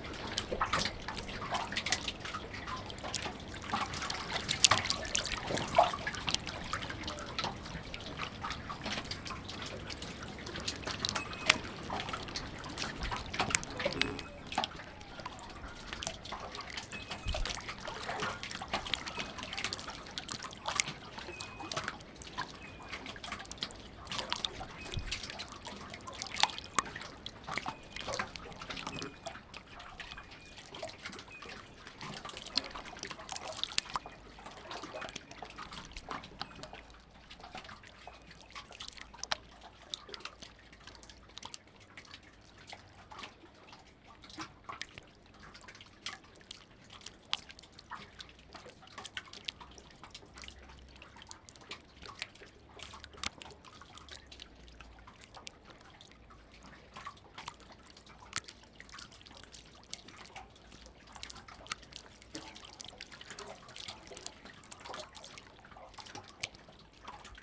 Kitchener Road, Takapuna, Auckland, New Zealand - pupuke wharf water
Water interacting with wharf on Lake Pupuke